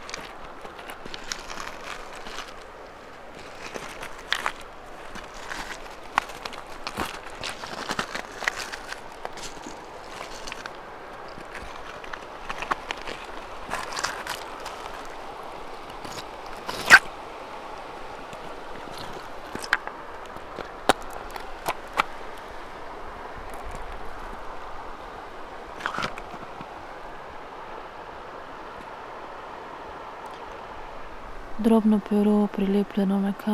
river Drava, Loka - snow poem
winter, spoken words, snow, frozen stones, steps